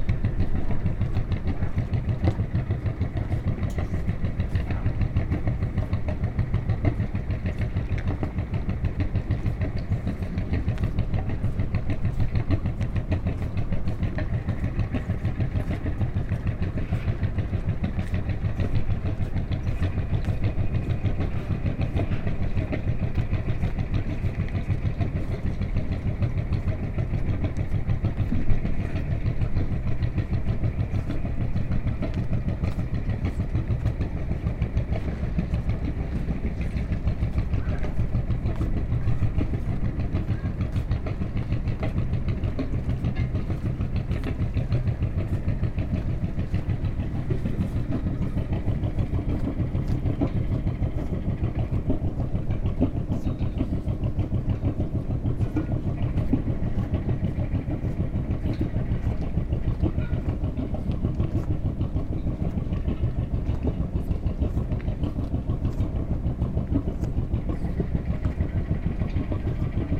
diver doing something like chopping on a small, but loud, rattling boat, construction work near by
Rovinj, Croatia, 29 December